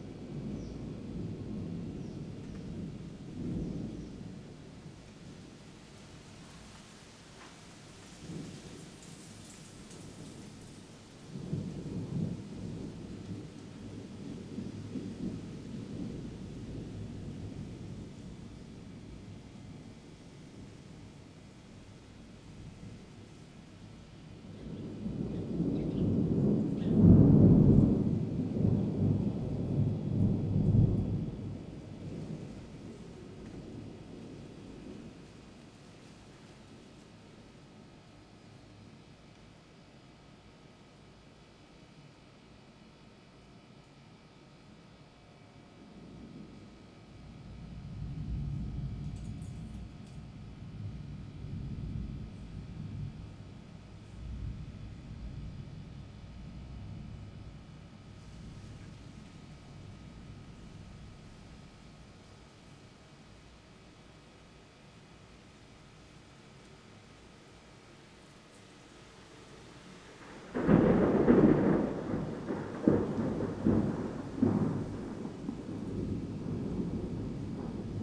No., Fuqun Street, Xiangshan District, Hsinchu City, Taiwan - August Thunderstorm
A summer thunderstorm moves through the Fuqun Gardens community. Leaves are blown around by wind gusts, and occasional birds and vehicles are heard. Recorded from the front porch. Stereo mics (Audiotalaia-Primo ECM 172), recorded via Olympus LS-10.
臺灣, 1 August 2019